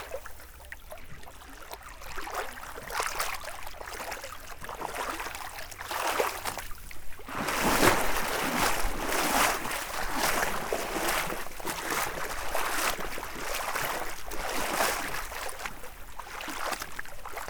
Polisot, France - Swimming
The Seine river is flowing from the Burgundy area to the Normandy area. In this part of the river, ther's no footpath to walk along the river. So we made the choice to discover the river swimming. As we didn't have any choice, we made 139 km swimming like that, during a little more than one week. It was quite long but very beautiful.